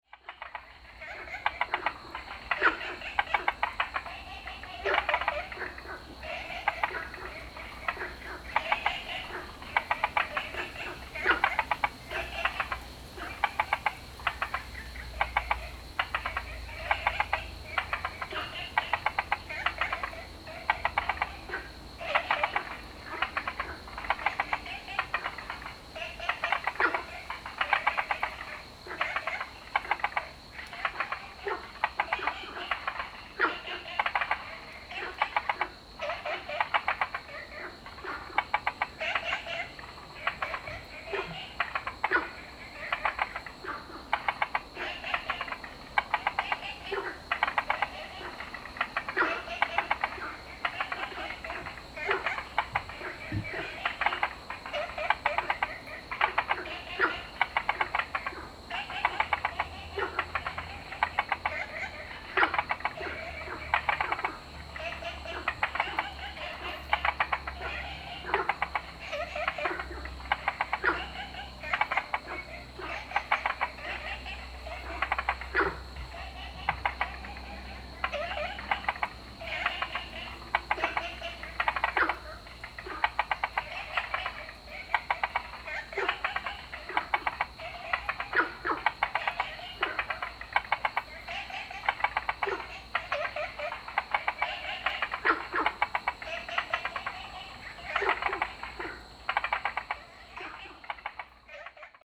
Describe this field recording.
In the park, Frog sound, Ecological pool, Zoom H2n MS+XY